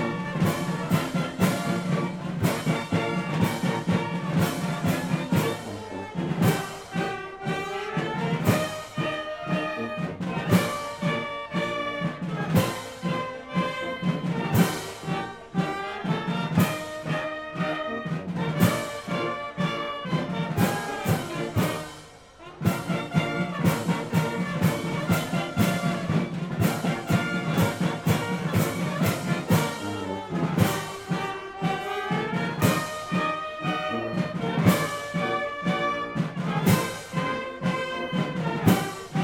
Pl. Jean Jaurès, Saint-Étienne, France - Procession Ste-Barbe - 2018
St-Etienne - de la cathédrale St-Charles Borromée au Musée de la mine - Procession de la Ste-Barbe
ZOOM H6
France métropolitaine, France